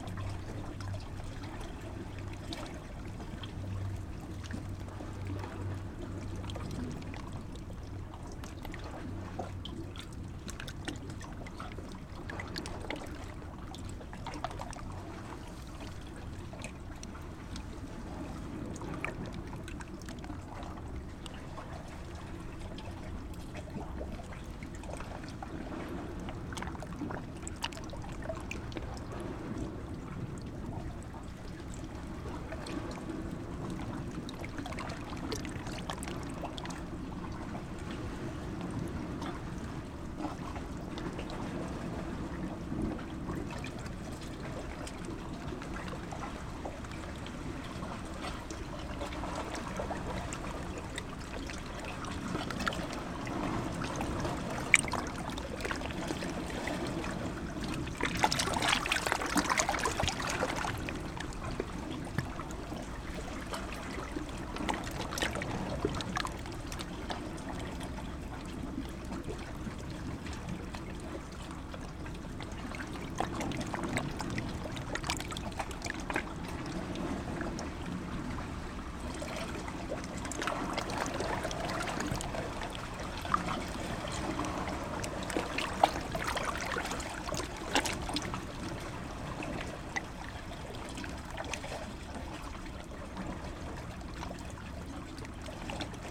{"title": "Kissamos, Crete, amongst the stones", "date": "2019-04-25 15:15:00", "description": "small microphones amongst the stones and rocks at the sea", "latitude": "35.52", "longitude": "23.64", "altitude": "2", "timezone": "Europe/Athens"}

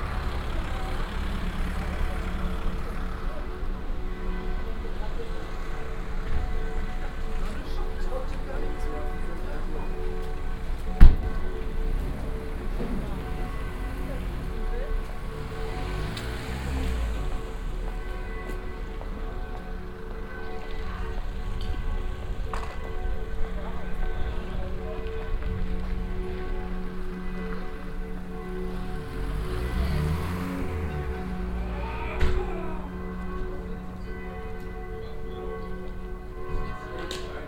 {"title": "unna, bahnhofstraße, bells on noon", "description": "near the main station on a small place, rare traffic passing by, some car doors being closed, the church bells in the distance\nsoundmap nrw - social ambiences and topographic field recordings", "latitude": "51.54", "longitude": "7.69", "altitude": "104", "timezone": "Europe/Berlin"}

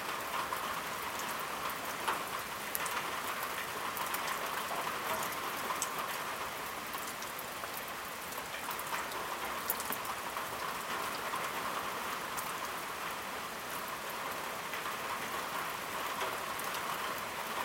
{"title": "rain on leaves, silence - rain on veranda", "description": "stafsäter recordings.\nrecorded july, 2008.", "latitude": "58.31", "longitude": "15.66", "altitude": "119", "timezone": "GMT+1"}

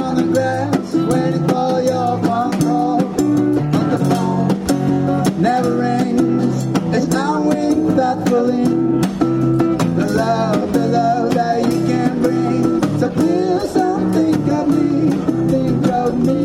{"title": "Concert at Der Kanal, Weisestr. - Der Kanal, Raumkörper, Konzert von Nicolas Pas Entier", "date": "2011-06-17 19:51:00", "description": "One of our more invisible parttakers at DER KANAL appears suddenly in passionate musical outburst. With his guitar, backed by his good friend on drums. As people during this years 48 Stunden Neukölln stand magnetified the two man band sets up their stage in the street and make our neighbours lean out from their balconies to see the face to this beautiful voice.", "latitude": "52.48", "longitude": "13.42", "altitude": "60", "timezone": "Europe/Berlin"}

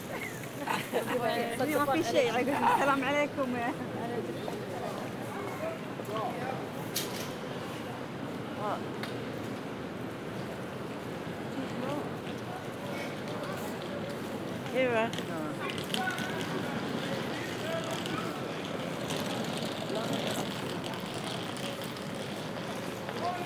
{"title": "London, market Middlesex Street - a pound a piece", "date": "2010-10-03 12:00:00", "description": "London, sunday morning, walking up and down Middlesex Street market", "latitude": "51.52", "longitude": "-0.08", "altitude": "28", "timezone": "Europe/London"}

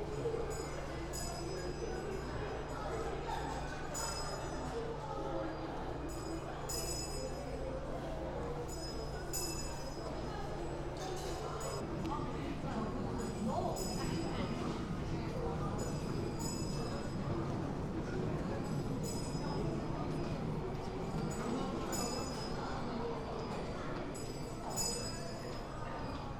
{"date": "2016-12-04 14:00:00", "description": "Hand-rung bell in Hongik Station Underground, Seoul, South Korea", "latitude": "37.56", "longitude": "126.92", "altitude": "25", "timezone": "GMT+1"}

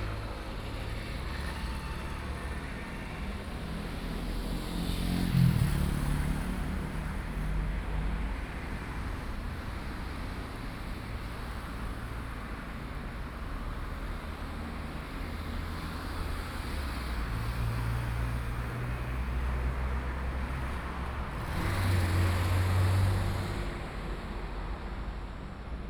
{"title": "Xuejin Rd., Wujie Township - At railroad crossing", "date": "2014-07-25 17:52:00", "description": "In front of the railroad crossing, Traffic Sound\nSony PCM D50+ Soundman OKM II", "latitude": "24.70", "longitude": "121.77", "altitude": "9", "timezone": "Asia/Taipei"}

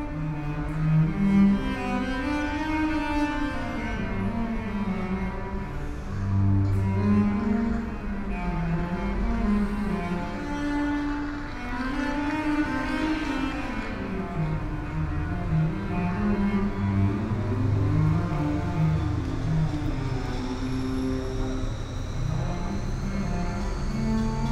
{"title": "Central Arcade, Newcastle upon Tyne, UK - Central Arcade busker", "date": "2016-03-24 14:00:00", "description": "Busker playing inside Central Arcade. Thursday mid afternoon. Recorded on Sony PCM-M10.", "latitude": "54.97", "longitude": "-1.61", "altitude": "53", "timezone": "Europe/London"}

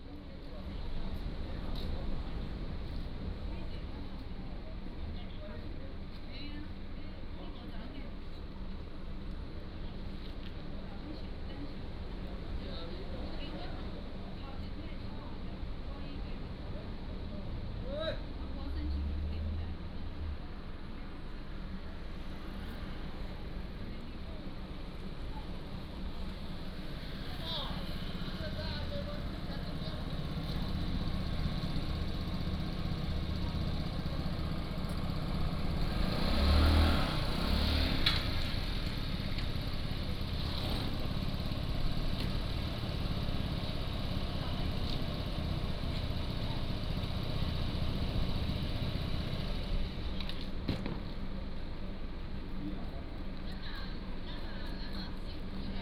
{"title": "Beigan Township, Lienchiang County - In the bus station", "date": "2014-10-13 16:26:00", "description": "In the bus station, Many tourists", "latitude": "26.22", "longitude": "119.98", "altitude": "182", "timezone": "Asia/Taipei"}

